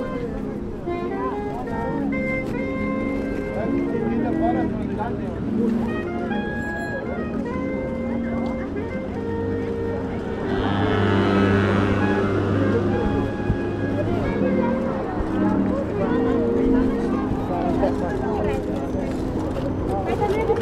Flohmarkt Mauerpark, Berlin
Weg von der gegenüberliegenden Straßenseite zum Eingang des Flohmarktes. Straßenmusiker -> Sopransaxophon mit playback aus Brüllwürfel
Berlin, Germany